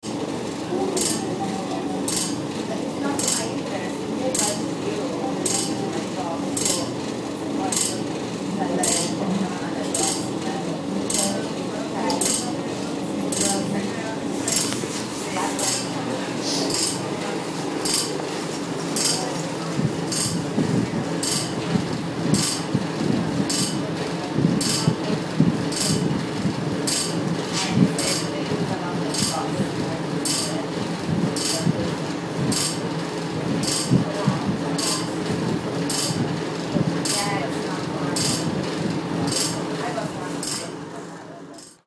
Tamworth train station. Heater#1
Vibrating heater above the door in train station waiting room.
1 February 2010, ~00:00